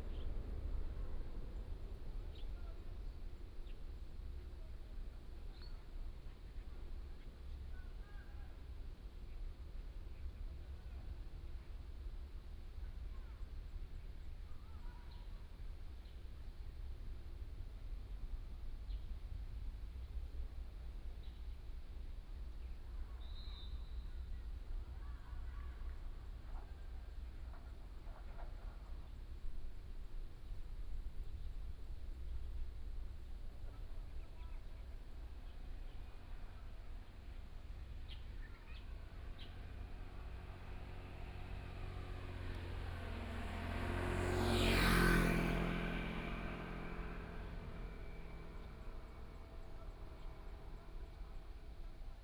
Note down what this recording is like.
Landing, birds sound, traffic sound, Near the airport